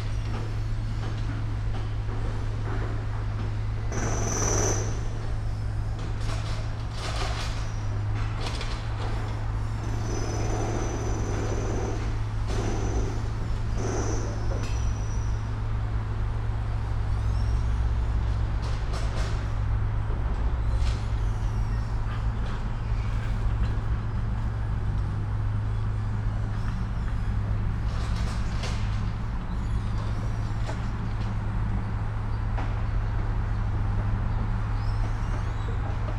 Lok n Store Building plot, The river Kennet, Reading Berkshire, UK - Construction sounds of 119 riverside flats being built
This is another recording of the building work going on across the river from me, completion is due to be Autumn 2019, I will have been driven insane by then...Sony M10 Boundary Array.
9 August 2018